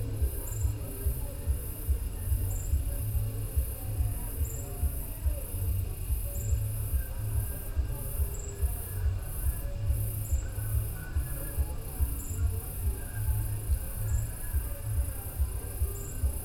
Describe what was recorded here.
...night sounds and voices from at least two bars in the neighbourhoods…. Night birds and insects and Binga’s dogs tuning in...